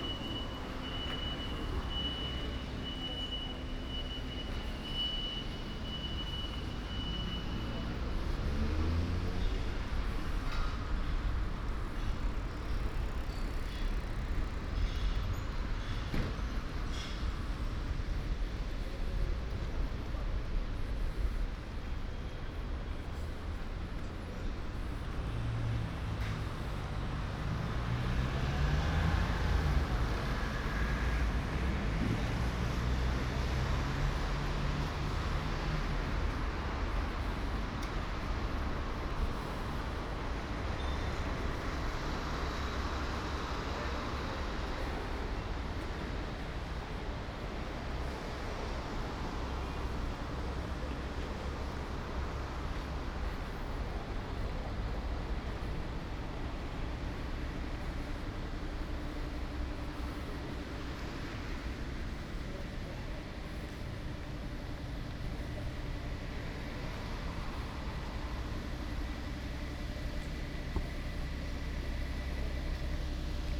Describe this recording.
"Morning (far) walk AR-II with break in the time of COVID19" Soundwalk, Chapter CXVIII of Ascolto il tuo cuore, città. I listen to your heart, city, Friday, August 14th, 2020. Walk to a (former borderline far) destination; five months and four days after the first soundwalk (March 10th) during the night of closure by the law of all the public places due to the epidemic of COVID19. Round trip where the two audio files are joined in a single file separated by a silence of 7 seconds. first path: beginning at 10:51 a.m. end at 11:16 a.m., duration 25’02”, second path: beginning at 03:27 p.m. end al 03:54 p.m., duration 27’29”, Total duration of recording 00:52:38, As binaural recording is suggested headphones listening. Both paths are associated with synchronized GPS track recorded in the (kmz, kml, gpx) files downloadable here: first path: second path: Go to Chapter LX, Wednesday, April 29th 2020 and Chapter CXVIII, Thursday July 16th 2020: same path and similar hours.